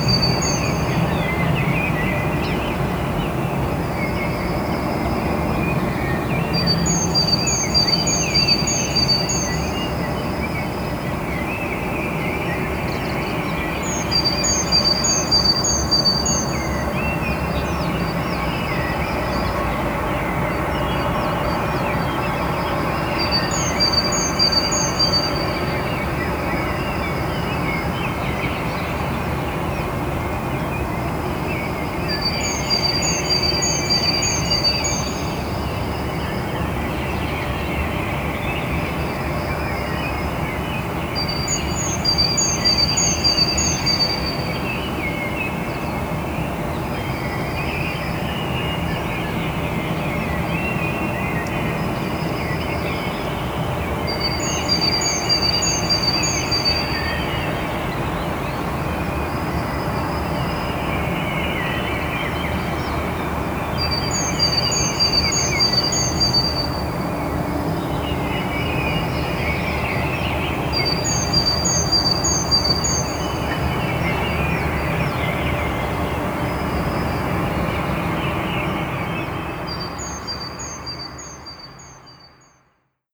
An (inevitably) urban Dawn Chorus, recorded in confinement. While the lockdown had silenced most of the traffic and city rumble, changing to Summer Daylight Saving Time pushed ahead the start of the working day for the few industries that kept going, so it coincided with the high point of the daily dawn chorus.
Castilla y León, España, 7 April 2020